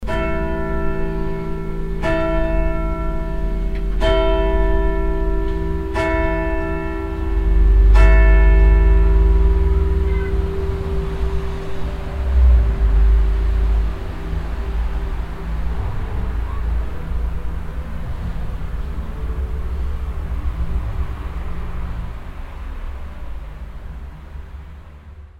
{
  "title": "wiltz, st.petrus church, bells",
  "date": "2011-08-09 13:02:00",
  "description": "Outside the church. The sound of the 5 o clock afternoon bells surrounded by he street traffic from the nearby streets.\ninternational village scapes - topographic field recordings and social ambiences",
  "latitude": "49.97",
  "longitude": "5.93",
  "altitude": "318",
  "timezone": "Europe/Luxembourg"
}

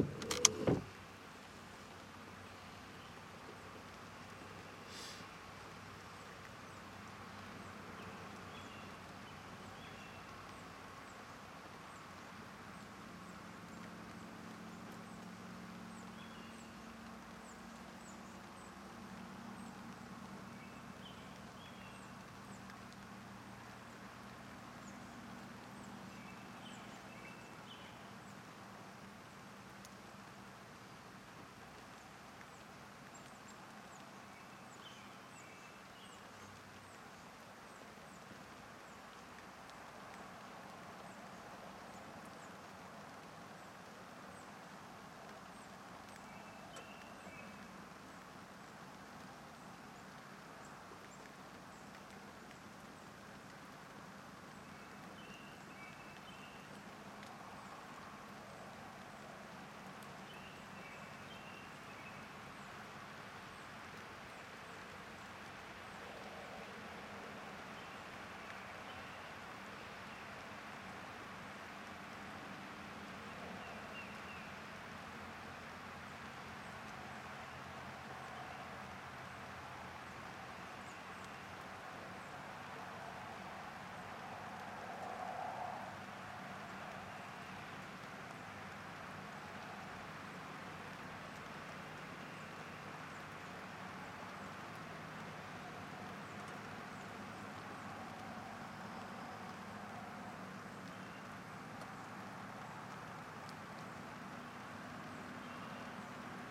{
  "title": "Rothbury Rest Area, Grant Township, MI, USA - Spring Rain at the Rest Stop",
  "date": "2016-04-30 19:11:00",
  "description": "Turning off the windshield wipers, then light rain, birds and northbound traffic on US-31. Stereo mic (Audio-Technica, AT-822), recorded via Sony MD (MZ-NF810, pre-amp) and Tascam DR-60DmkII.",
  "latitude": "43.48",
  "longitude": "-86.36",
  "altitude": "215",
  "timezone": "America/Detroit"
}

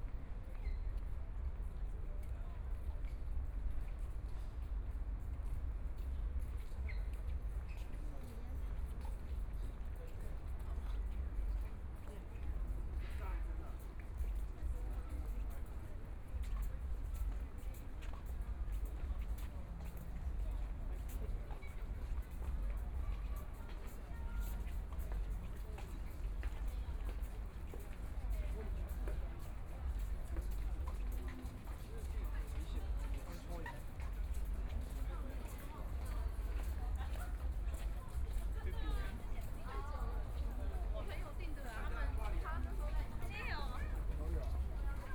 {
  "title": "Arts Park - Taipei EXPO Park - Walking through the park",
  "date": "2014-02-16 20:55:00",
  "description": "Walking through the park, Many tourists, Aircraft flying through, Traffic Sound\nBinaural recordings, Please turn up the volume a little\nZoom H4n+ Soundman OKM II",
  "latitude": "25.07",
  "longitude": "121.53",
  "timezone": "Asia/Taipei"
}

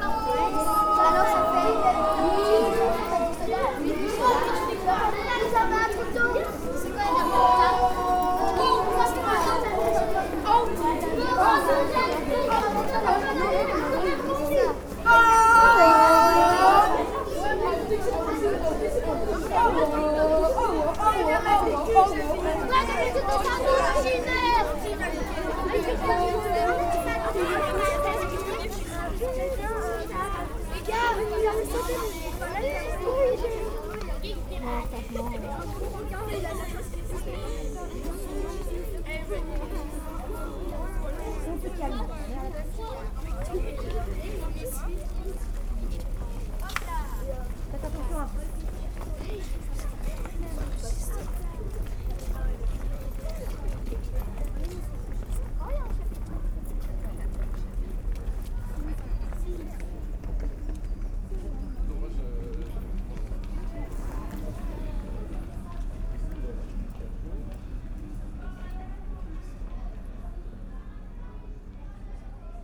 {
  "title": "L'Hocaille, Ottignies-Louvain-la-Neuve, Belgique - Folowing children",
  "date": "2016-03-23 09:20:00",
  "description": "Following children, from the main place of Louvain-La-Neuve, to the Blocry swimming pool. Sometimes, they are singing. A young child noticed me and said : wow, he's not allowed to record us ;-) He was 6-7 years old and I was discreet !\nWalking with them was very enjoyable.",
  "latitude": "50.67",
  "longitude": "4.60",
  "altitude": "133",
  "timezone": "Europe/Brussels"
}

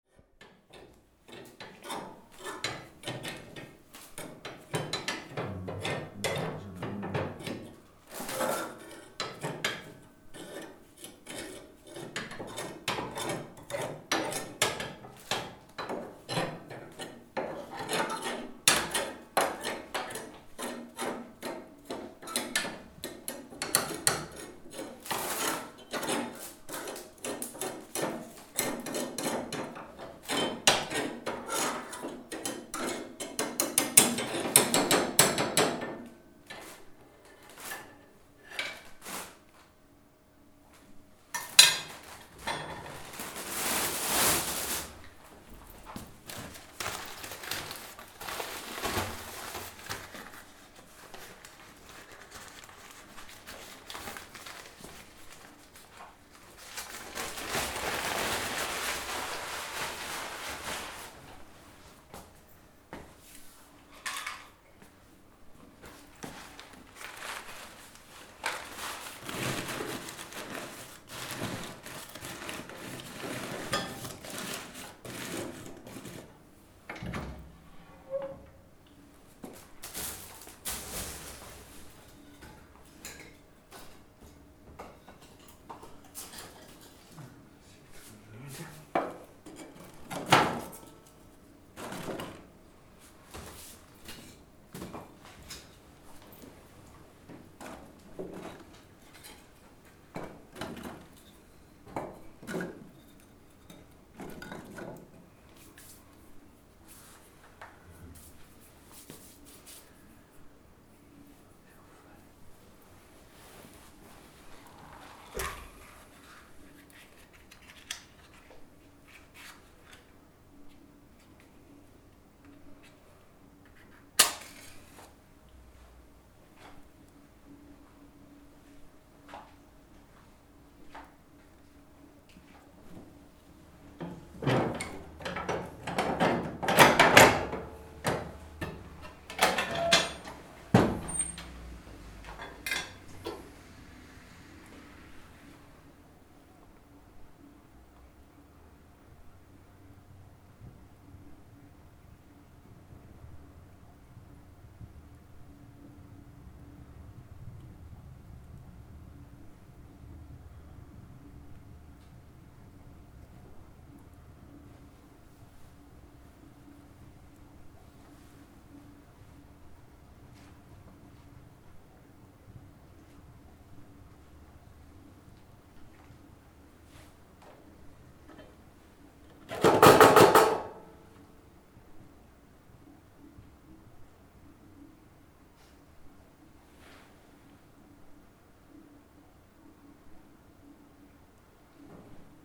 {
  "title": "Selva Fuoco",
  "date": "2011-07-14 19:50:00",
  "description": "Feuer, Fuoco, Wärme mitten im Juli im Süden, per forza",
  "latitude": "46.30",
  "longitude": "10.05",
  "altitude": "1452",
  "timezone": "Europe/Zurich"
}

